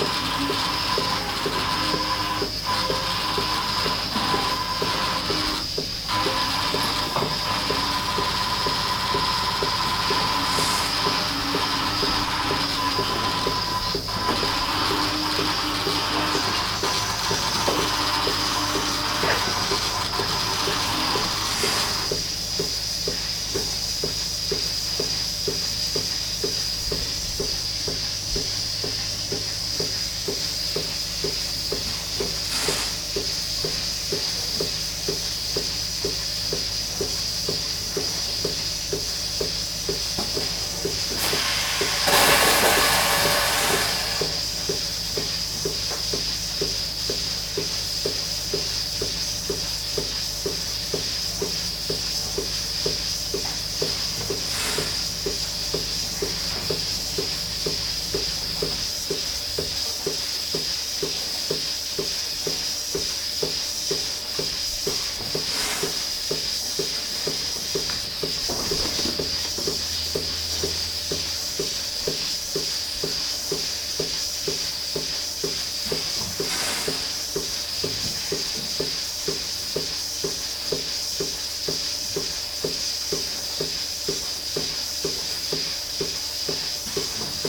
Halenfeld, Buchet, Deutschland - Eine Kuh wird automatisch gemolken /
Eine Kuh steht im Melkroboter und wird um 11 Liter Milch erleichtert.
One cow in the milking robot be pumped 11 liters of milk.
Germany, Germany, 6 July 2014, ~13:00